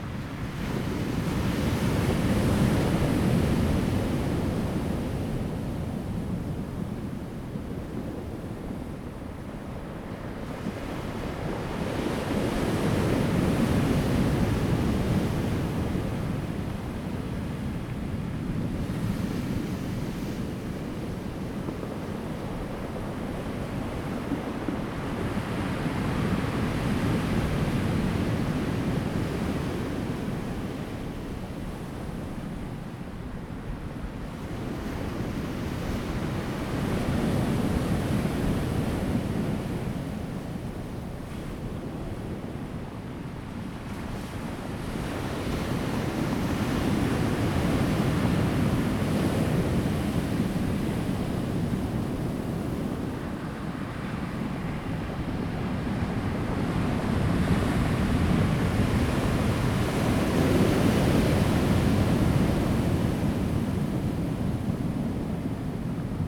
南田海岸親水公園, 達仁鄉 - Sound of the waves
Sound of the waves, Rolling stones
Zoom H2n MS+XY
Daren Township, 台26線